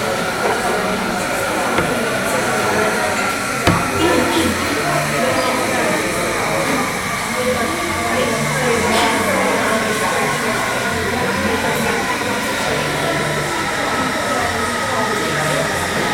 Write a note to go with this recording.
ambient cafe noise, many indistinct conversations, occasional sound of coffee machine and crockery, heavy rain outside. H2n recorder.